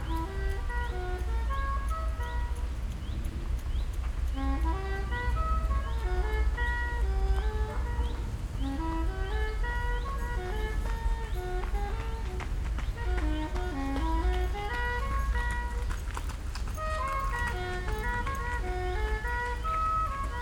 Volkspark Hasenheide, Berlin, Deutschland - musician practising, ambience
Volkspark Hasenheide, Berlin, Deutschland, musician practising on a saxophone, Saturday morning ambience with joggers
(Sony PCM D50, DPA4060)